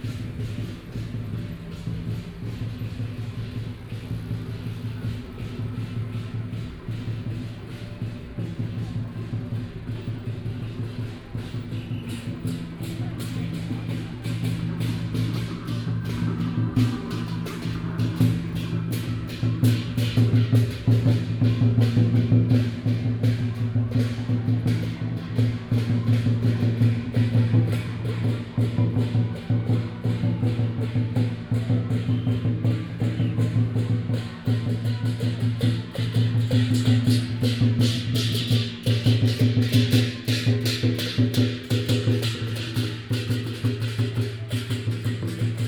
Panchiao Government Organization - Temple festival parade
Temple festival parade, A variety of traditional performances, Binaural recordings, Zoom H6+ Soundman OKM II
16 November 2013, 18:16